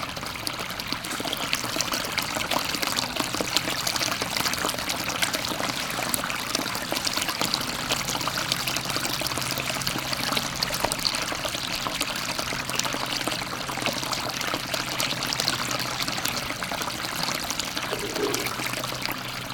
Lucie Stern Hall, Oakland, CA, USA - Leona Creek
This is a recording of a Leona Creek on the Mills College campus and was recorded onto A ZoomH4N using a shotgun microphone. This stream sound was recorded from inside a small tunnel in which if flowed which allowed the sound to be amplified a bit.